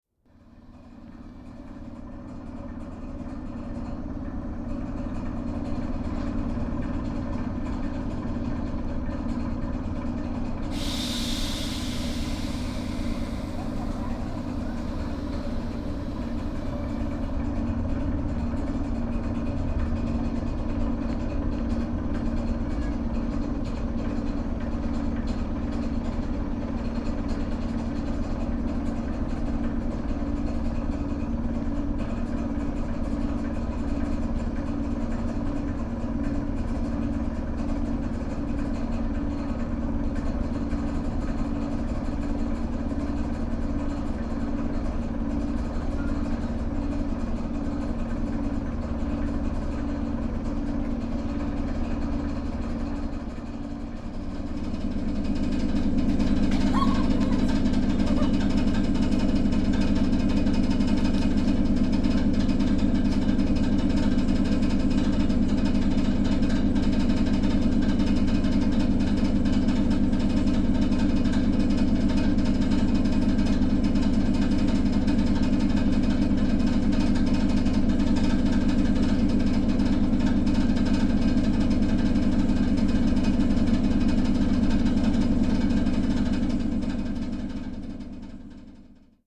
2 electric trains waiting on the platform while their enginges running. (jaak sova)
Tallinn, Estonia, 20 April 2011, 13:41